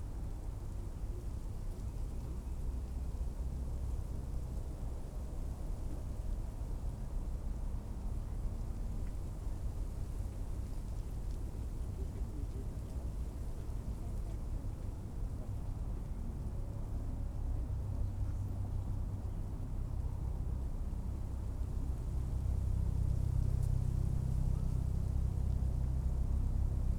Tempelhofer Feld, Berlin - wind in birch tree, helicopter
wind in the birch tree, traffic hum, a helicopter.
(SD702, SL502 ORTF)
Deutschland, 25 October 2019, ~17:00